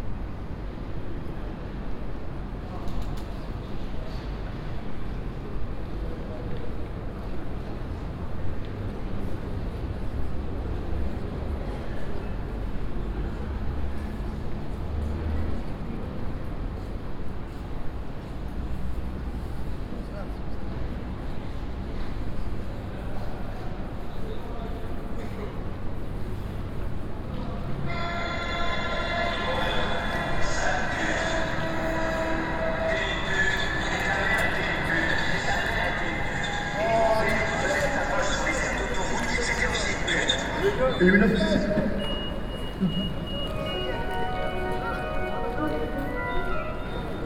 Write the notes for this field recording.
Binaural recording of a Gare de Flandres on Sunday morning. Ultimate readymade - Duchamp would be proud. Sony PCM-D100, Soundman OKM